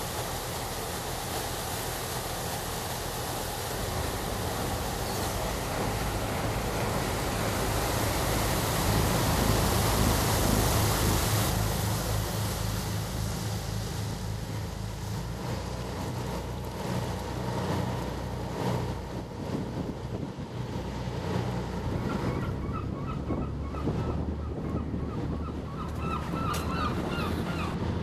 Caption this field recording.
This was recorded here as the clipper made its route threw the thames. I used a Olympus dm 670 and edited all my recordings but without using any effects or processing.